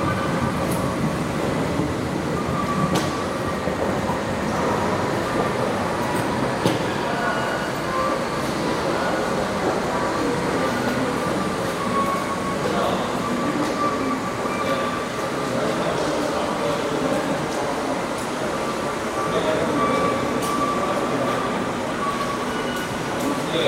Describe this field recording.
standing on the bridge overlooking the train tracks of the düsseldorf airport train station